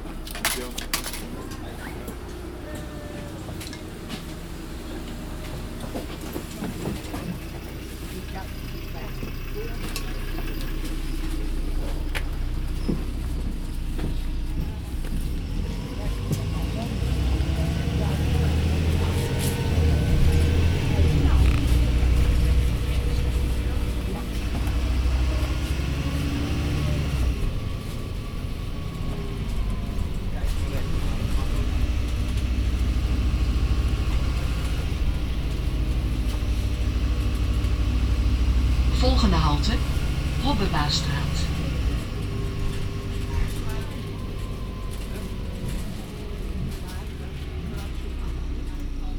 {
  "title": "De Weteringschans, Amsterdam, The Netherlands - Getting on the 170 bus",
  "date": "2013-11-30 15:36:00",
  "description": "The beginning of a commute to Sportlaan",
  "latitude": "52.36",
  "longitude": "4.88",
  "altitude": "7",
  "timezone": "Europe/Amsterdam"
}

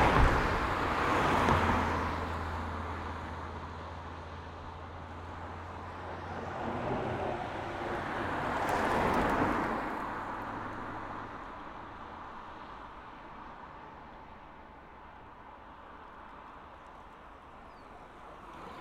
{
  "title": "Umeå, GimonasCK Bikeboost Time Trial, TT Bicycles competing",
  "date": "2011-05-28 14:15:00",
  "description": "Gimonas CK annual cyling competition event. Day 1. Tempo/Time trail bicycles passing by. The predominant sounds are the carbon disc rear wheels giving the hollow sound. (condensed recording)",
  "latitude": "63.83",
  "longitude": "20.17",
  "altitude": "20",
  "timezone": "Europe/Stockholm"
}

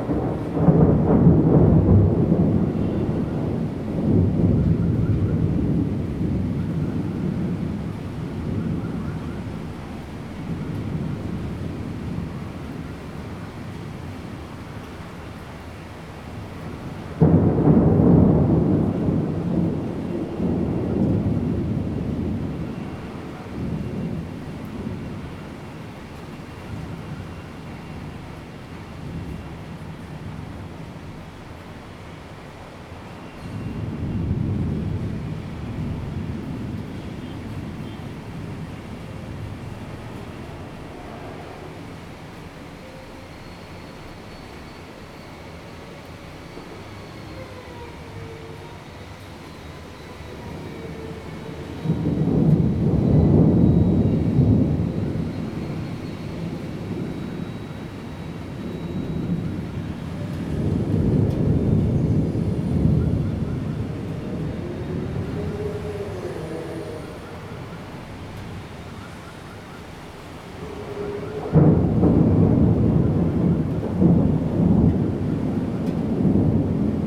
{
  "title": "Rende 2nd Rd., Bade Dist. - Thunder",
  "date": "2017-07-11 14:04:00",
  "description": "Thunderstorms, Housing construction, traffic sound\nZoom H2n MS+XY+ Spatial audio",
  "latitude": "24.94",
  "longitude": "121.29",
  "altitude": "141",
  "timezone": "Asia/Taipei"
}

